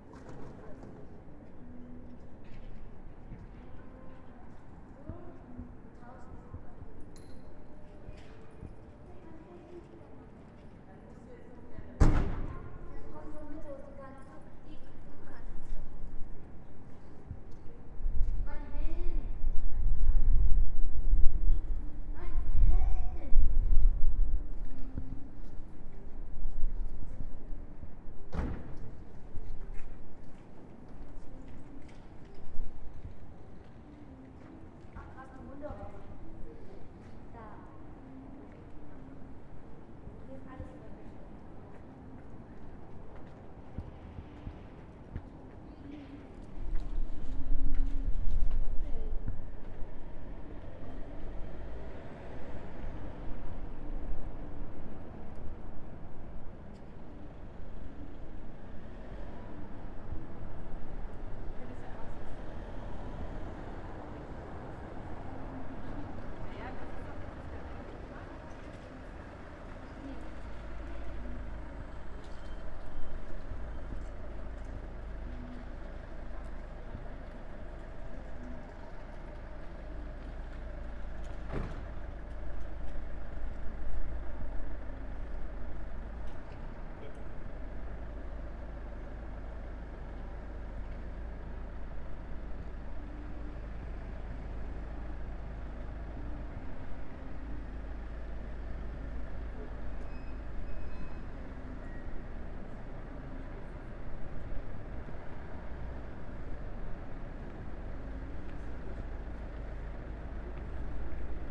Again no clapping in Berlin, seems people here don't establish it on a daily basis, and I also missed the bells today by 5 mins.
I wish the cars would be less.
Recorded again from my balcony, this time on a sound device recorder with Neumann KM 184 mics, on a cold, slightly windy, cloudless evening in times of Corona measures.